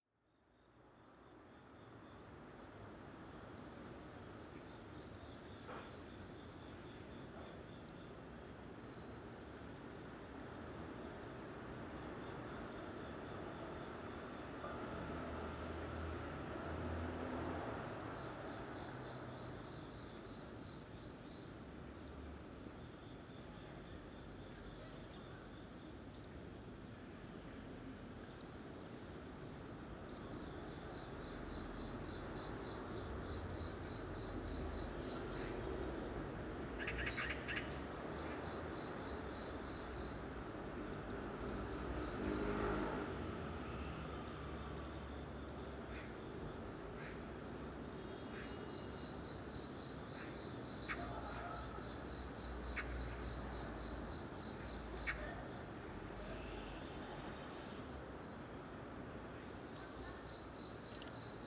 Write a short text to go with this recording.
Bangbae 5th Deconstruction Zone, Magpie, 방배5주택재건축구역, 낮